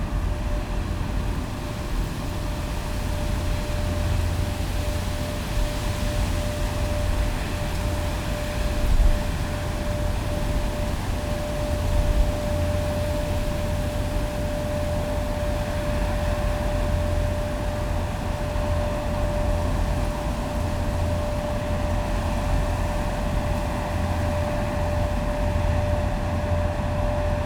Niehl, Köln, Deutschland - pedestrian bridge, freighter passing
Köln Niehl, harbour area, on the pedestrian bridge. drones of a cargo ship passing nearby on the river Rhein.
(Sony PCM D50, DPA4060)
Cologne, Germany, 29 July 2013, 19:35